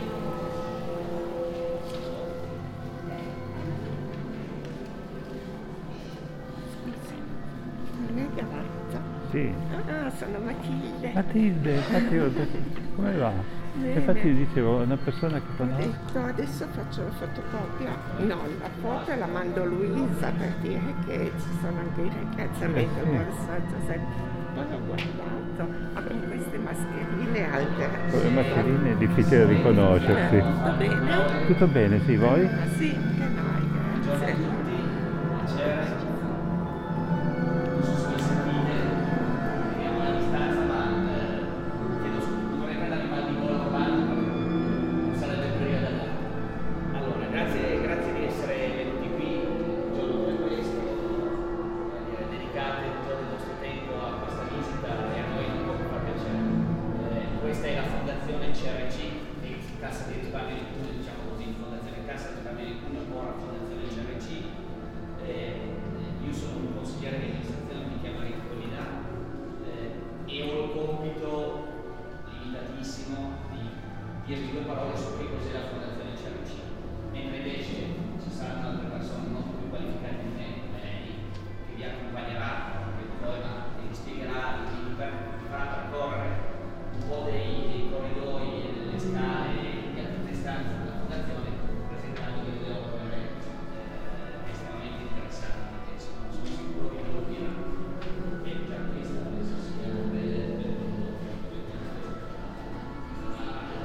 METS-Conservatorio Cuneo: 2019-2020 SME2 lesson1B - “Walking lesson SME2 in three steps: step B”: soundwalk
METS-Conservatorio Cuneo: 2019-2020 SME2 lesson1B
“Walking lesson SME2 in three steps: step B”: soundwalk
Thursday, October 1st 2020. A three step soundwalk in the frame of a SME2 lesson of Conservatorio di musica di Cuneo – METS department.
Step B: start at 10:22 a.m. end at 10:39, duration of recording 17’02”
The entire path is associated with a synchronized GPS track recorded in the (kmz, kml, gpx) files downloadable here: